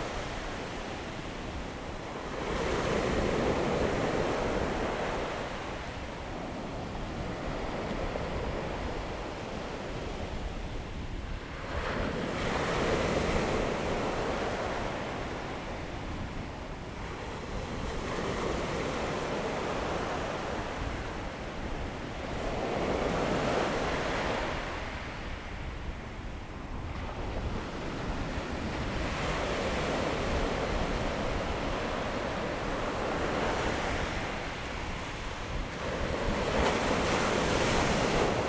大鳥村, Dawu Township - sound of the waves
Sound of the waves, In the beach, The weather is very hot
Zoom H2n MS +XY
Dawu Township, Taitung County, Taiwan